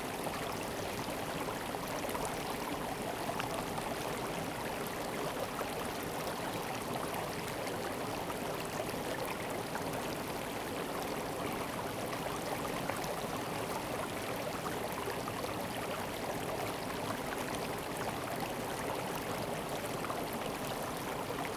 建農里, Taitung City - Small streams
Small streams
Zoom H2n MS+XY
Taitung County, Taiwan